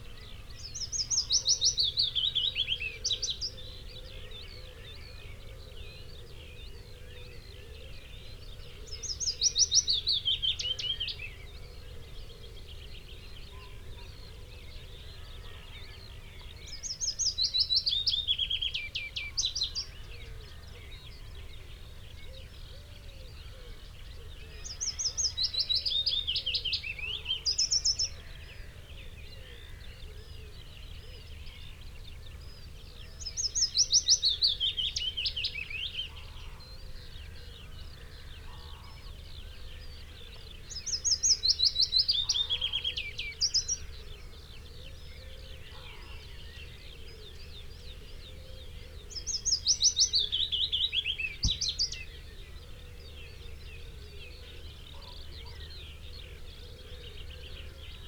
Green Ln, Malton, UK - willow warbler song soundscape ...
willow warbler song soundscape ... Luhd PM-01 binaural mics in binaural dummy head on tripod to Olympus LS 14 ... bird calls ... song ... from ... red-legged partridge ... pheasant ... chaffinch ... wood pigeon ... skylark ... whitethroat ... linnet ... blue tit ... crow ... blackbird ... song thrush ... some background noise ...
England, United Kingdom, 9 May 2020, 05:10